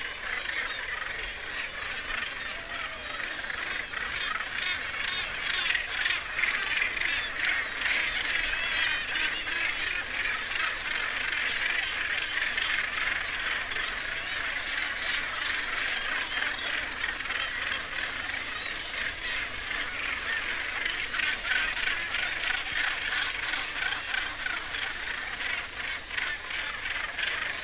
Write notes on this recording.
Gannet colony soundscape ... RSPB Bempton Cliffs ... gannet calls and flight calls ... kittiwake calls ... lavalier mics in parabolic reflector ... warm ... sunny morning ...